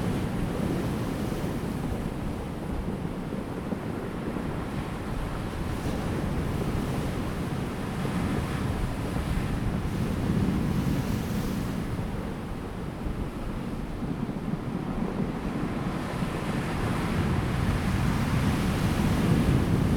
南田海岸親水公園, 達仁鄉, Taiwan - the waves
Sound of the waves, Rolling stones, wind
Zoom H2n MS+XY
Taitung County, Daren Township, 台26線, 23 March